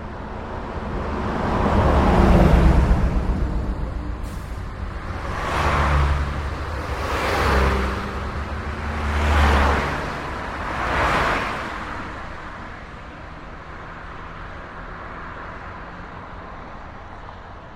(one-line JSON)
{"title": "Kapellen, België - Sluitingsprijs Putte - Kapellen", "date": "2014-10-14 15:00:00", "description": "the last cycling race of the year in Putte - Kapellen\nwaiting for \"the peleton\"", "latitude": "51.33", "longitude": "4.43", "altitude": "18", "timezone": "Europe/Brussels"}